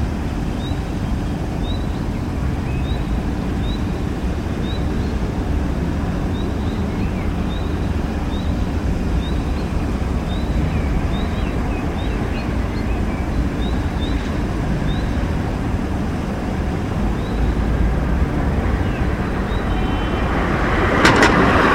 via Santa Maria, Parabiago (Milan), zona industriale
Zona industriale, ditta Plastigreen
Nerviano Milan, Italy, 27 May